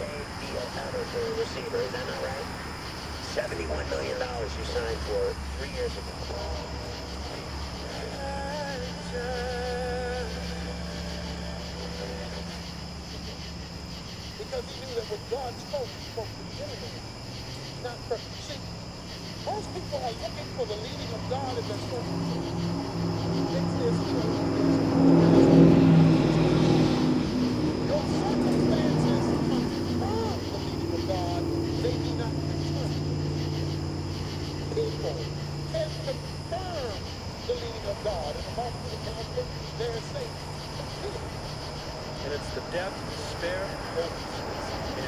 W Arthur Hart St, Fayetteville, AR, USA - Late-night FM and Open Window (WLD2018)
A brief survey of the FM band with the bedroom window open in Fayetteville, Arkansas. Also traffic from Highway 71/Interstate 49, about 200 feet away, and cicadas. For World Listening Day 2018. Recorded via Olympus LS-10 with built-in stereo mics.